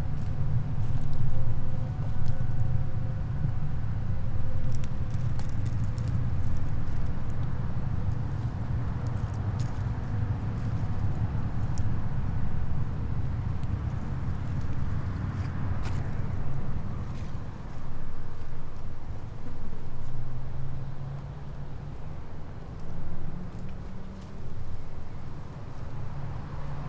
Panovec, Nova Gorica, Slovenija - Gozd in bolj ali manj bližnji promet
Flies, "far away" traffic, grass brushes.
Recorded with H5n + AKG C568 B